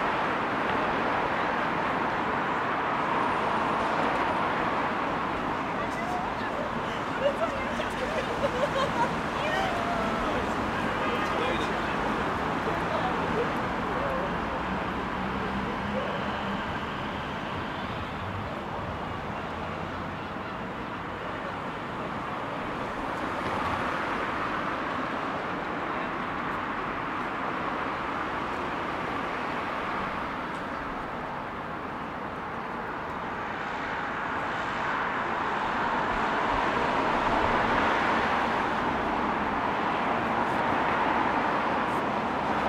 Queen's University, Belfast, UK - Queen’s University Belfast
Recording of vehicles passing, groups of friends chatting, passer-by, motorbike speeding, a person listening to radio or music, seagulls, emergency vehicle sirens, footsteps on bricks.